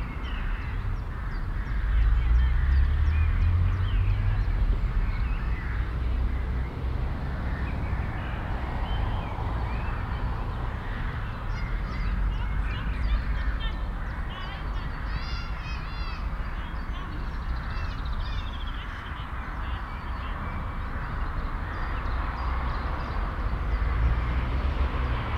Kronshagen, Deutschland - Sunday late afternoon
Spring, Sunday, late afternoon in an urban residential district. Birds, traffic, a plane, some people and children. Binaural recording, Soundman OKM II Klassik microphone with A3-XLR adapter and windshield, Zoom F4 recorder.
Kronshagen, Germany, 7 May 2017